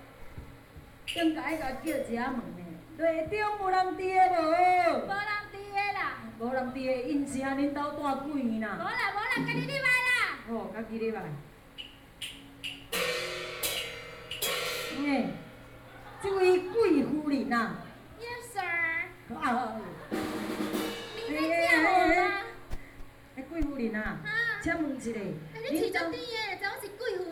Taiwanese Opera, Zoom H4n + Soundman OKM II